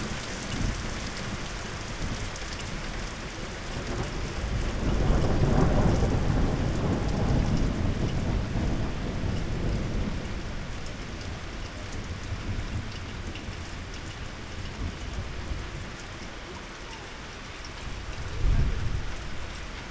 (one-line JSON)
{"title": "Köln, Maastrichter Str., backyard balcony - thunderstorm", "date": "2018-05-16 19:25:00", "description": "Köln, Maastrichter Str., backyard balcony, moderate thunderstorm in spring\n(Sony PCM D50, DPA4060)", "latitude": "50.94", "longitude": "6.93", "altitude": "57", "timezone": "Europe/Berlin"}